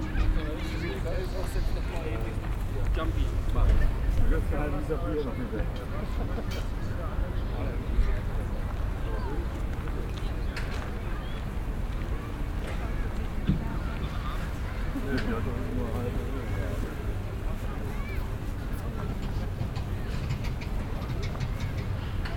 wolfsburg, autostadt, menschen + schaukeln am teich
besucher der autostadt auf schaukeln, absaugegeräusche dr teichanlage, im hintergrund klänge des wasserorchesters
soundmap:
topographic field recordings and social ambiences